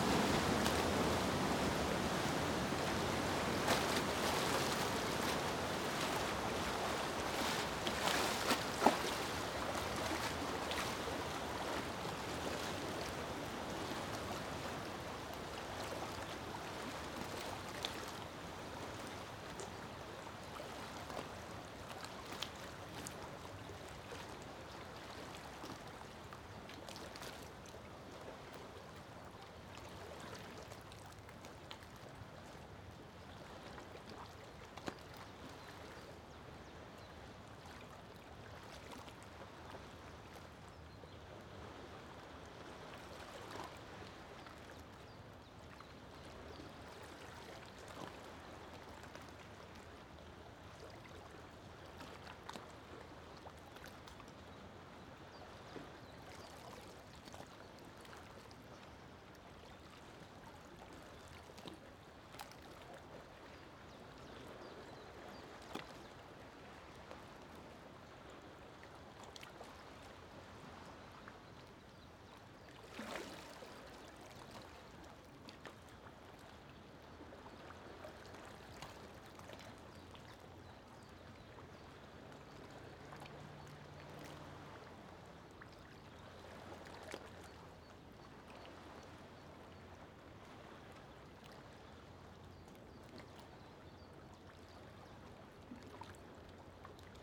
Meggenhorn, Schweiz - Anlegen eines Raddampfers
Eine organische Mischung von verschiedensten Geräuschen, die sich gegenseitig ergänzen. Motorboot, Militärflieger, Schiffshorn, Wasserwellen. Und durch alles fährt ungestört der Raddampfer seine Strecken ab.
Juni 2001